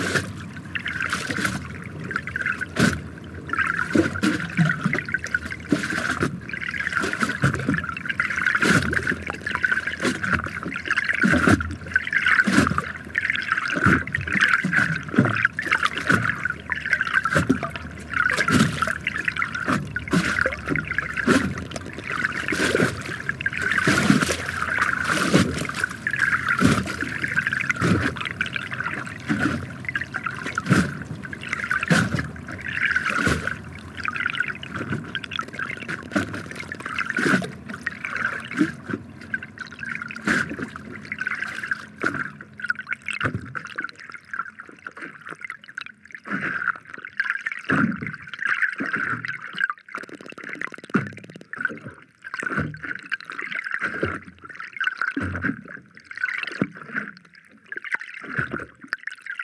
3-channel live mix with a pair of DPA 4060s and a JrF hydrophone. Recorded with a Sound Devices MixPre-3.
United Kingdom